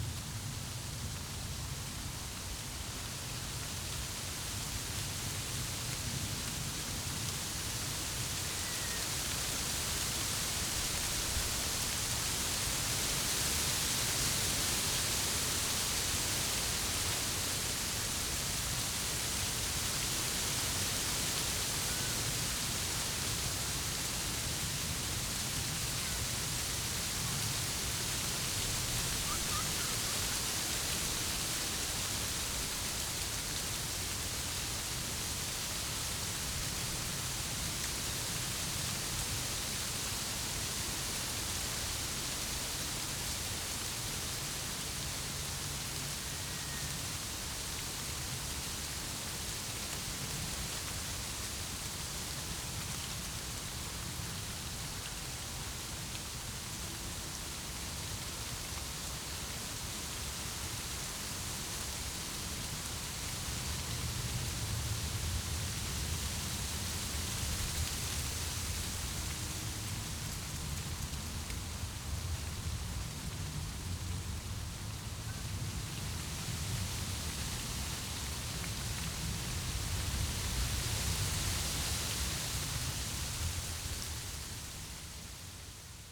Tempelhofer Park, Berlin - wind in a hazelnut bush
the sound of wind in leaves becomes harsh in autumn
(Sony PCM D50, DPA4060)